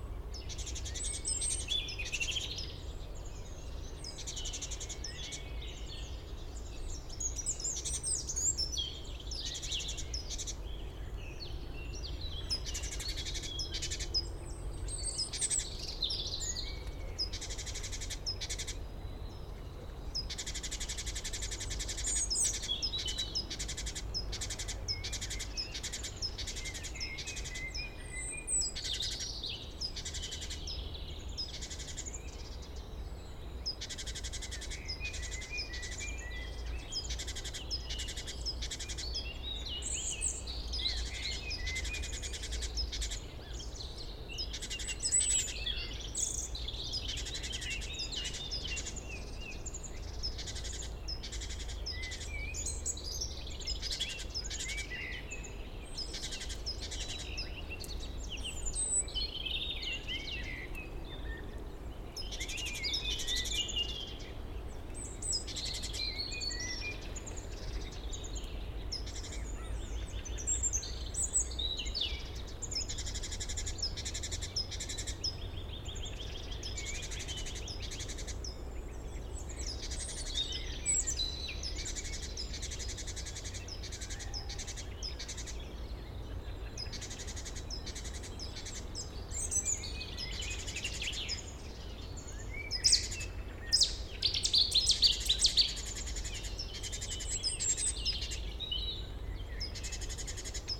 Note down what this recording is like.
Les chants du printemps dans les bois de Chindrieux, mésanges et rouge-gorge, circulation de la RD991 dans le fond.